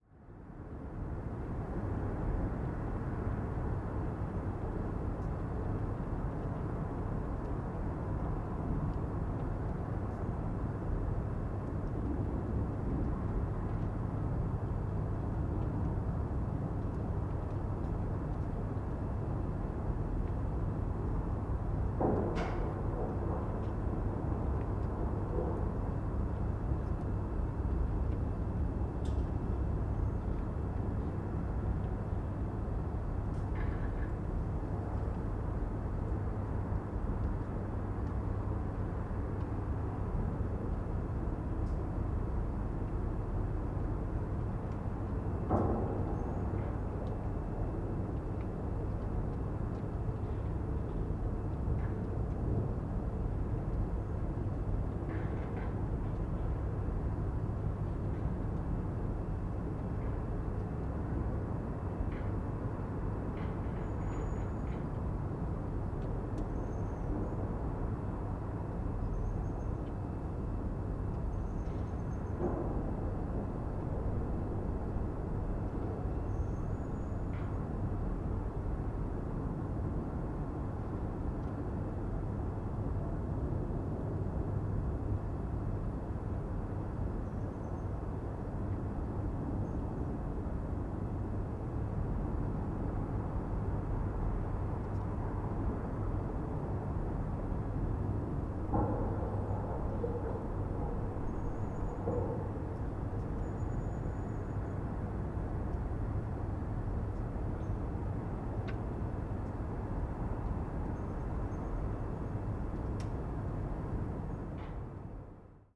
night ambience in Riga, Latvia - night ambience in Riga
sounds from the harbor area at night